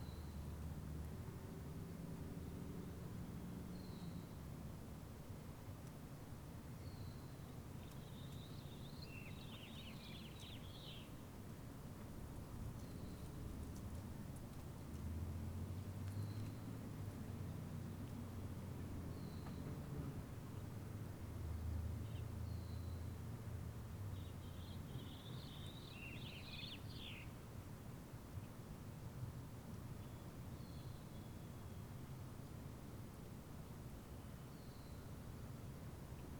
Waters Edge - Spring Afternoon
Microphone in the front of the house facing the street. Birds, traffic, planes, and the neighbors can be heard.
Washington County, Minnesota, United States, 2 April, ~13:00